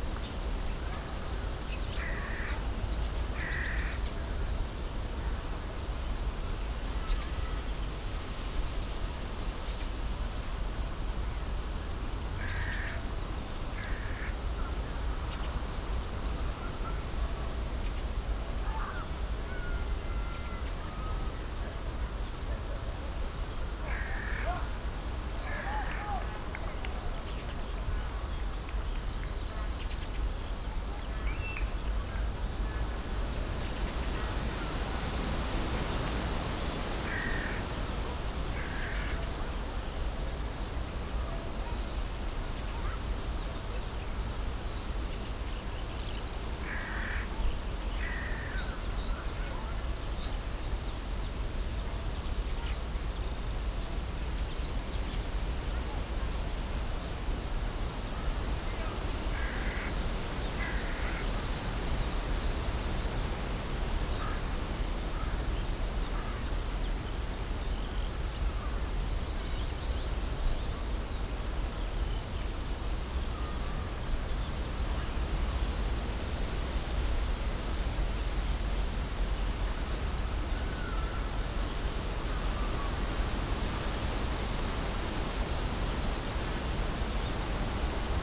easter Sunday late afternoon, nice breeze in my beloved group of poplar trees on former Tempelhof airport.
(Sony PCM D50, DPA4060)